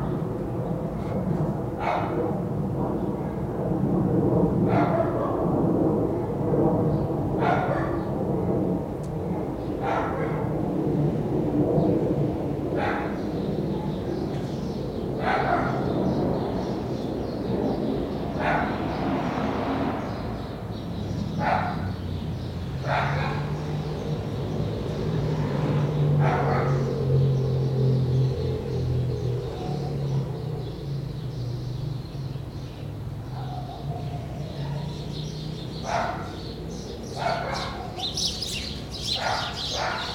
Braddock Street Backyard - Thursday afternoon in North Philadelphia
Recorded w/ Sound Devices MixPre-6 w/ Studio Projects C-4 Small Diaphragm Stereo Pair Microphones in a Philadelphia backyard at 4:30 pm on Thursday afternoon.
Pennsylvania, United States of America, 26 March, 16:40